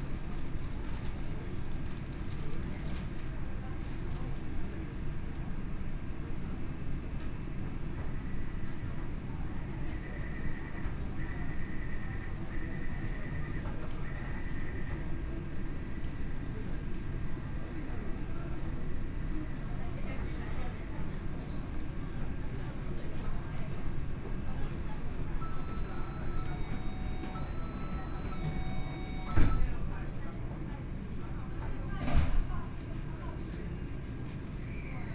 Taipei, Taiwan - Orange Line (Taipei Metro)
from Guting Station to Songjiang Nanjing station, Binaural recordings, Zoom H4n+ Soundman OKM II
6 February 2014, ~17:00